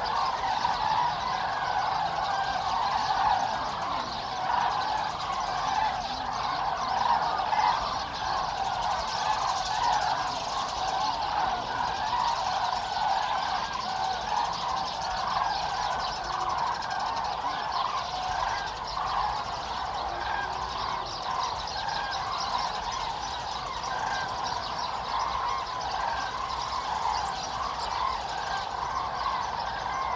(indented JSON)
{
  "title": "Linum, Fehrbellin, Germany - Migrating cranes, multiple waves",
  "date": "2016-10-26 17:41:00",
  "description": "During their autumn migration northern Europe's cranes gather in tens of thousands at Linum to feed and rest before continuing their journey southwards to Spain. During daylight hours the birds disperse to the surrounding farmlands, but just before dusk, with meticulous punctuality, they return in great numbers to a small area of fields and pools close to the village to roost. It is an amazing sight accompanied by wonderful, evocative sound. Wave after wave of birds in flocks 20 to 80 strong pass overhead in ever evolving V-formations trumpeting as they fly. Equally punctually, crowds of human birdwatchers congregate to see them. Most enjoy the spectacle in silence, but there are always a few murmuring on phones or chatting throughout. Tegel airport is near by and the Berlin/Hamburg motorway just a kilometer away. Heavy trucks drone along the skyline. Tall poplar trees line the paths and yellowing leaves rustle and hiss in the wind. Cows bellow across the landscape.",
  "latitude": "52.76",
  "longitude": "12.89",
  "altitude": "33",
  "timezone": "Europe/Berlin"
}